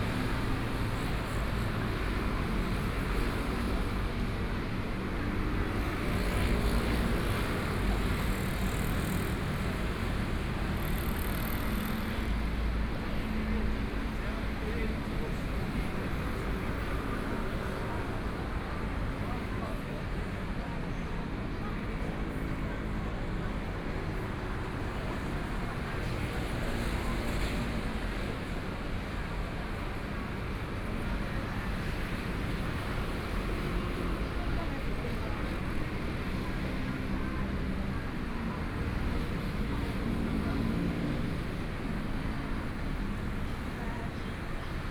walking in the Tianxiang Rd., from Minquan W. Rd., From the intersection into the small roadway Traffic Sound, Binaural recordings, Zoom H4n+ Soundman OKM II